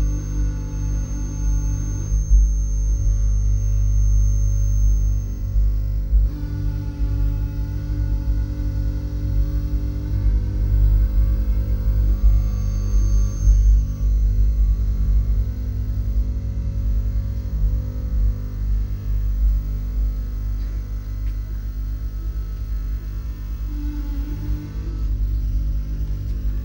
soundmap nrw: social ambiences/ listen to the people - in & outdoor nearfield recordings